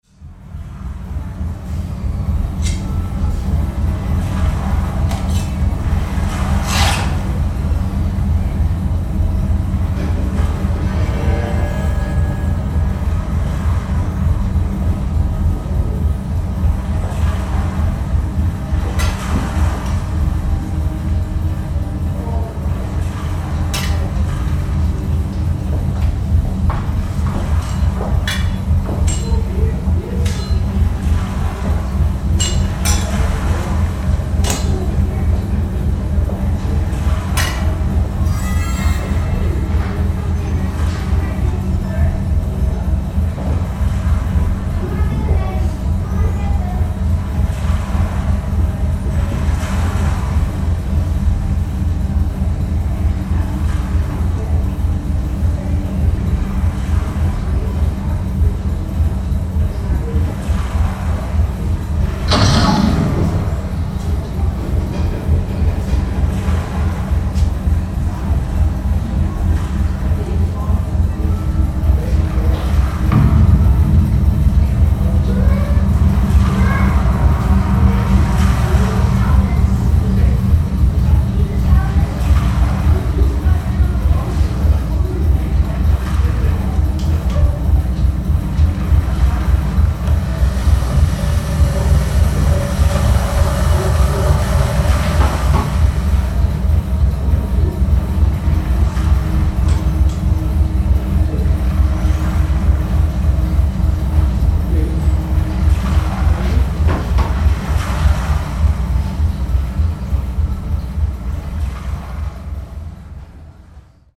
Maritime museum
inside the new installation on whale hunting